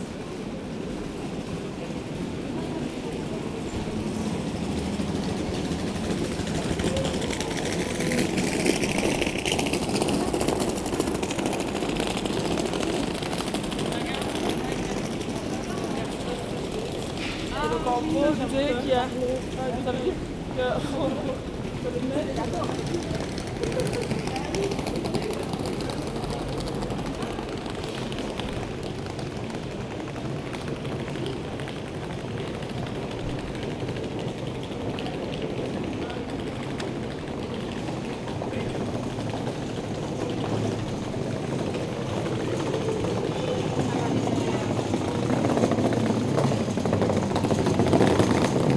Namur, Belgique - Students going back home
People walking on the street. In front of a Christmas store, two old persons find the statuettes very expensive. A family is walking, a lot of students going back home with their suitcase.
23 November, ~18:00